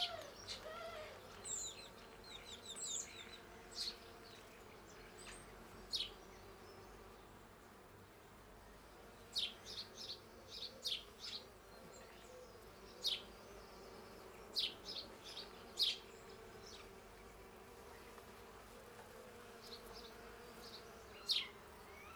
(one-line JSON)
{"title": "Hesket Newmarket - Bees in June", "date": "2022-06-16 07:32:00", "description": "Recorder placed in garden tree in amongst bees. Birds in the background.", "latitude": "54.74", "longitude": "-3.02", "altitude": "170", "timezone": "Europe/London"}